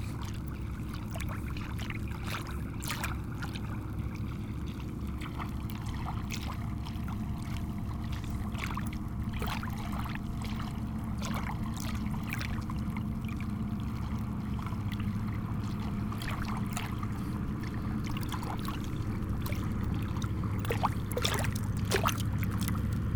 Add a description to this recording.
The end of the end of Pointe d'Arçay, a sandy jetty in the sea. The small waves and a fishing vessel passing.